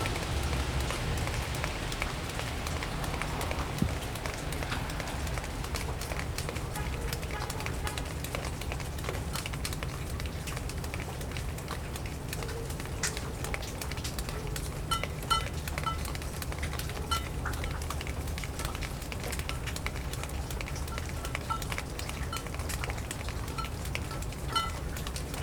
Suffex Green Lane, GA - Water Dripping After Downpour
A recording made on a gloomy, miserable day. You can hear water dripping from the roof of the apartment and dripping into a large puddle near a rain gutter. Some of the water also hits a metal container kept near the side of the house, which produces a characteristic "clink" sound. Recorded on a patio with Tascam Dr-22WL.